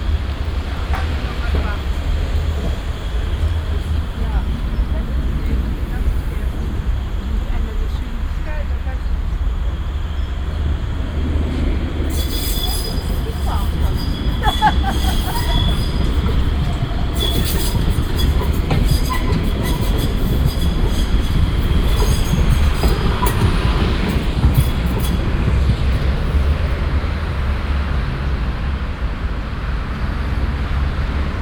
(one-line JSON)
{"title": "cologne, ubierring, ampel + strassenbahn - koeln, sued, ubierring, strassenbahnen", "description": "zwei strassenbahnen, morgens\nsoundmap nrw:", "latitude": "50.92", "longitude": "6.97", "altitude": "52", "timezone": "GMT+1"}